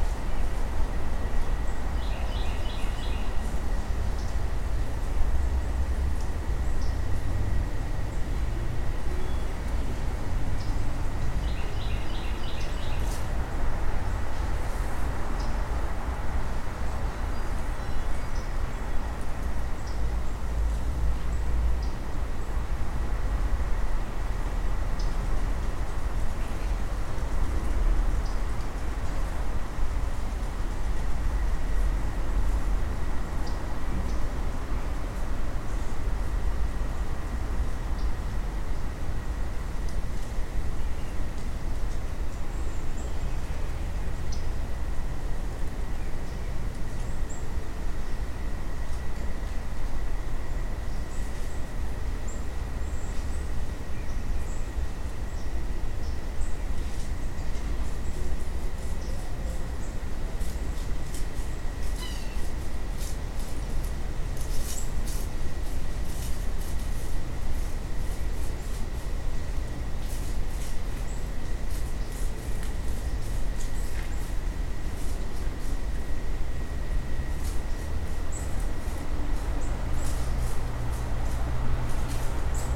Glendale Ln, Beaufort, SC, USA - Back Porch By The Marsh
The back porch of a house which is situated right in front of some marsh land. Squirrels, songbirds, a woodpecker, and an owl are among the many sounds heard.
[Tascam DR-100mkiii & Primo EM-272 omni mics]
South Carolina, United States